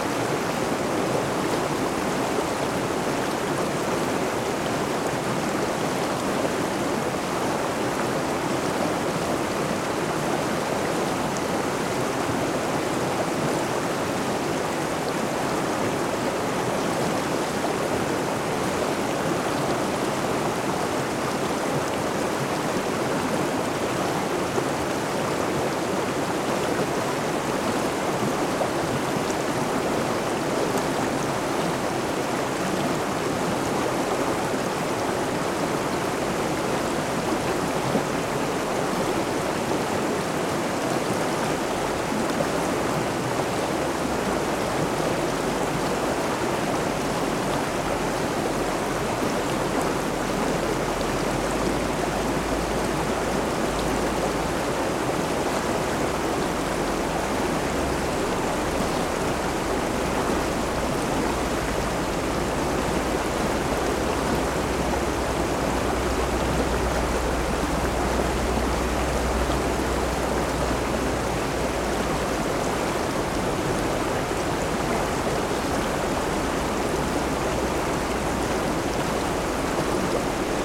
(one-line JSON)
{"title": "Laguna Negra, Picos de Urbion - Queda de agua, Laguna Negra", "date": "2013-04-16 10:00:00", "description": "Queda de agua na Laguna Negra em Picos de Urbion. Mapa Sonoro do rio Douro. Waterfall at Laguna Negra, Picos de Urbion. Douro River Sound Map.", "latitude": "41.99", "longitude": "-2.86", "altitude": "2006", "timezone": "Europe/Madrid"}